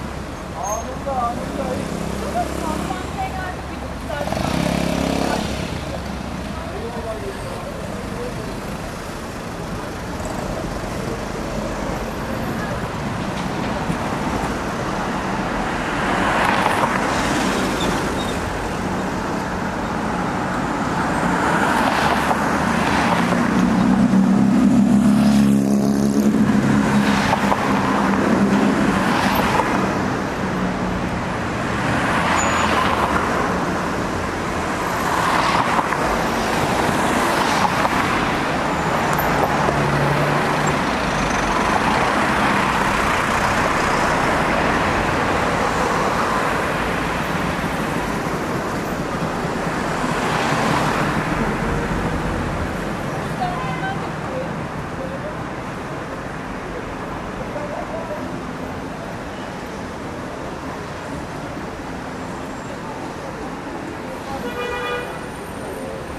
Fullmoon Nachtspaziergang Part VI
Fullmoon on Istanul. Crossing Büyükdere Caddesi. Crossing it for four minutes.